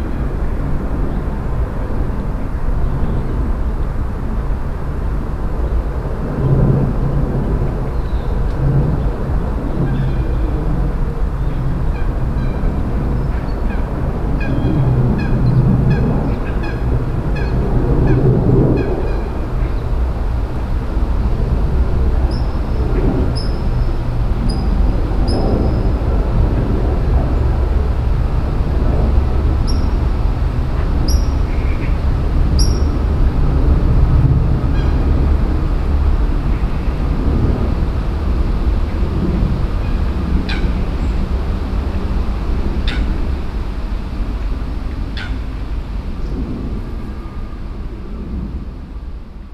Brussels, Jardin Expérimental Jean Massart Experimental Garden